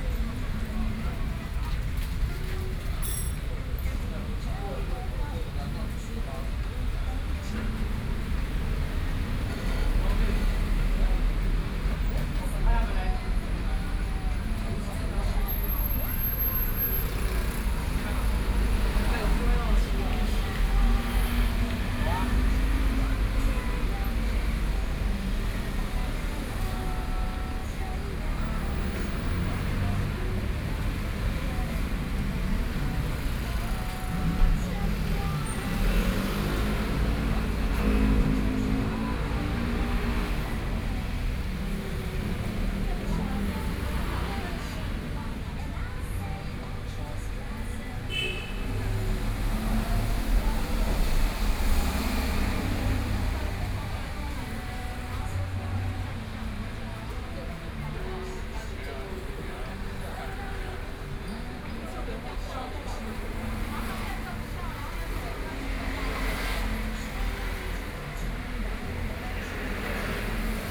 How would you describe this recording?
In front of the restaurant, Traffic Sound, Binaural recordings, Zoom H6+ Soundman OKM II